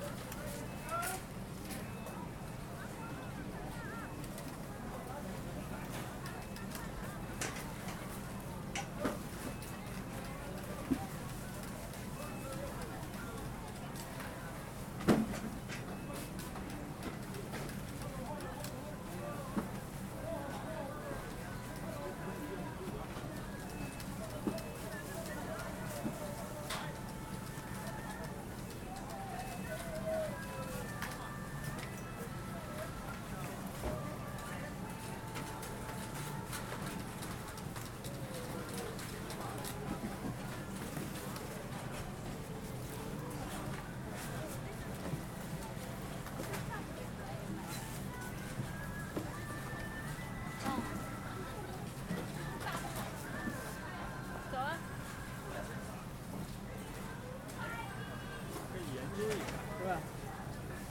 Flushing, Queens, NY, USA - New World Mall J Mart 1
Standing next to the durian fruit display in the produce section of J Mart Supermarket